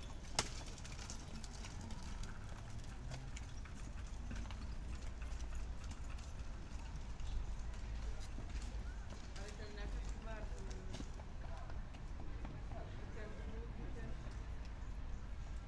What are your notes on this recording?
atmosphere at the Fluxus Bridge